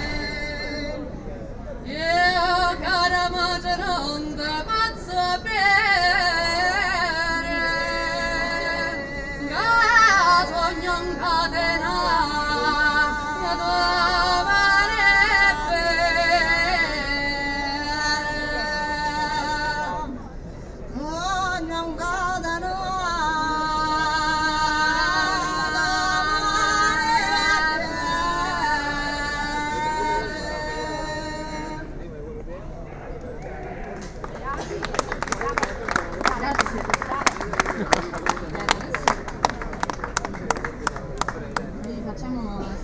Matilde Politti Simona di Gregorio anctichi canti femminili siciliani (EDIROL R-09hr)